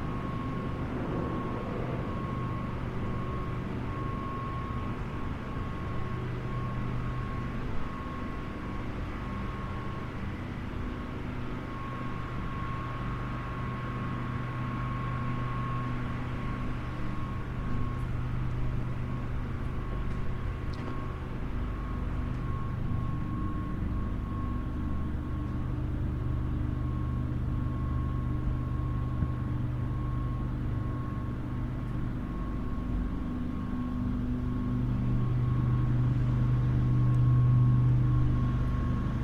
inside the old pump room - machines humming
England, United Kingdom